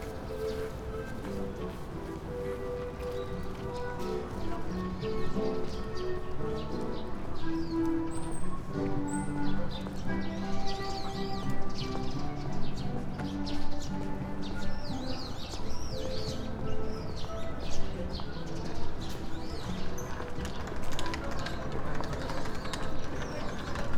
uenokoen, tokyo - musicians, homeless people, workers, walkers ...
Tokyo, Japan, 19 November 2013